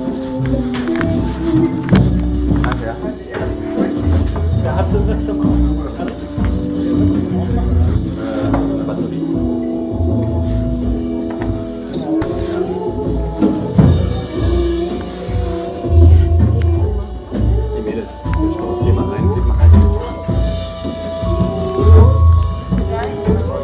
Concert at Der Kanal, Weisestr. - Der Kanal, Season of Musical Harvest: KOBERT
The Norwegian Band KOBERT give a surprisingly intimate concert. All ears on their beautiful sounds as they play songs from their new album Invasion of Privacy. As people come in and close the door behind them, they found the concert space all covered with clothes - what was feared to sound a little damped, in the end went right into the listeners hearts. This season of musical harvest was a plain succes, we have found some fruitful trasures. The winter is safe!